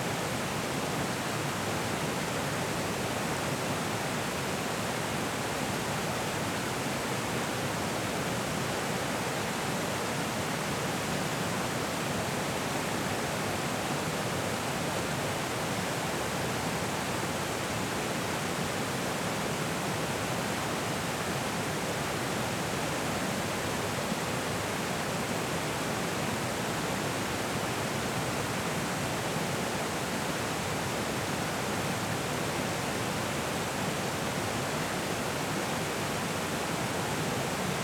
After a night of rains a previously dry river begins flowing heavily.
Recorded with a Zoom H5
11 April 2022, 10:58